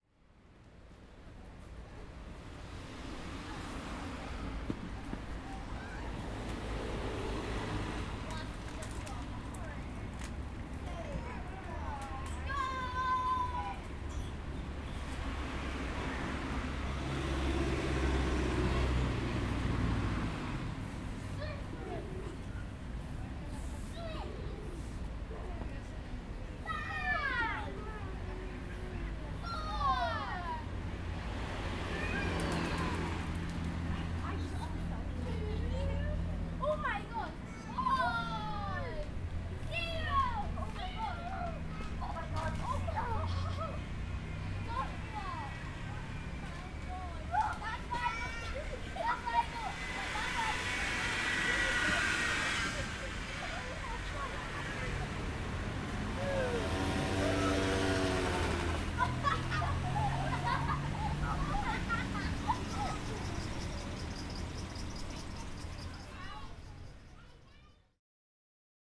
Old port, Corfu, Greece - Old Port Square - Πλατεία Παλαιού Λιμανιού
Children playing. Cars and motorbikes passing by. The square is surrounded by a parking and El. Venizelou Street.